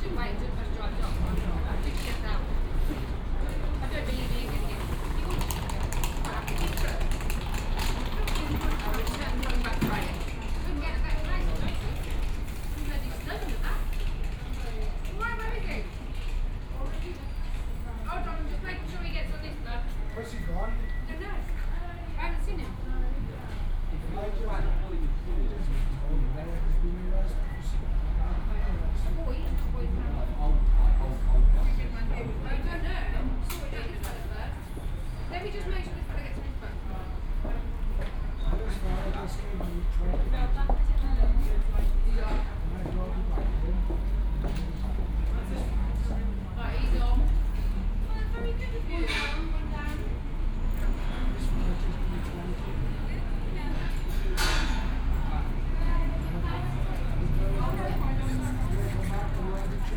Gloucester Rd./Chain Alley, Oxford - bus station ambience
waiting for someone at Oxford bus station
(Sony D50, OKM2)
Oxford, Oxfordshire, UK, 11 March, 14:20